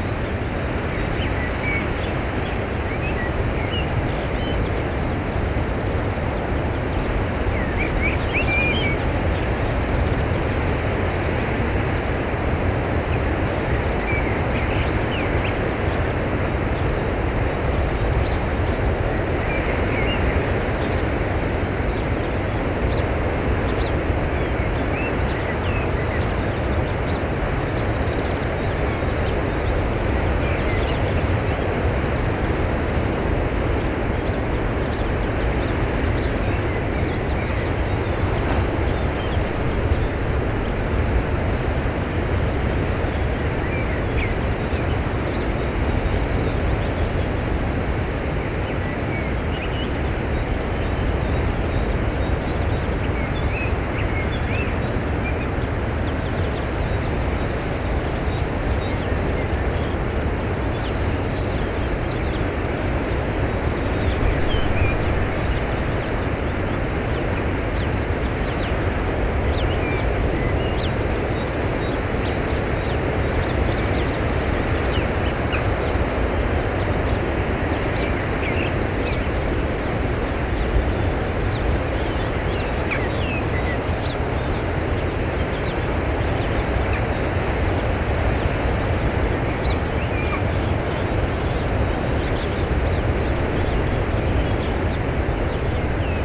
Early morning. Waves in background and bird songs.
Tôt au matin. Bruit des vagues et chants des oiseaux.